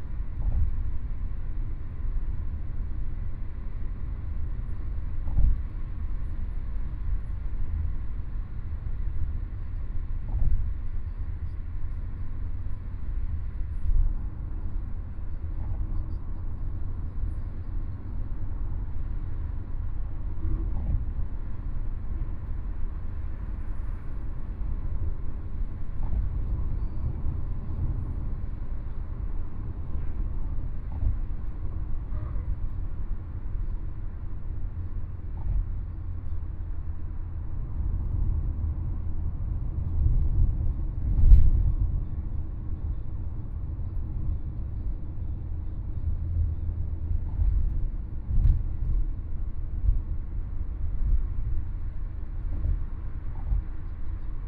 The Free Online Dictionary and Encyclopedia, Shanghai - in the Taxi
On the highway, Binaural recording, Zoom H6+ Soundman OKM II